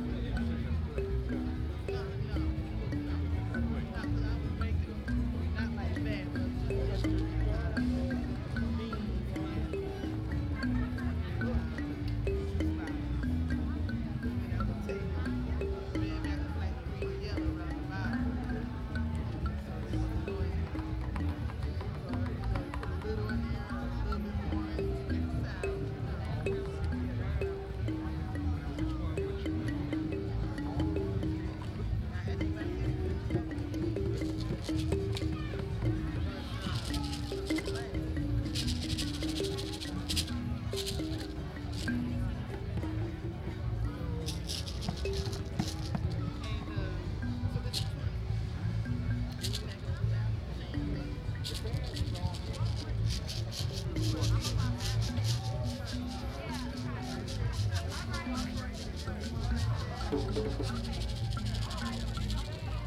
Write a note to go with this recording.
Trying out thumb pianos taken from a bag